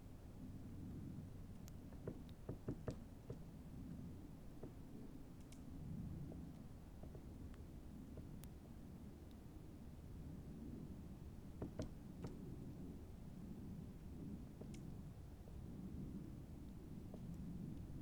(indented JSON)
{
  "title": "Lithuania, Utena, woodpecker",
  "date": "2013-02-25 16:10:00",
  "description": "woodpecker's morse in wintery swamp",
  "latitude": "55.53",
  "longitude": "25.60",
  "altitude": "106",
  "timezone": "Europe/Vilnius"
}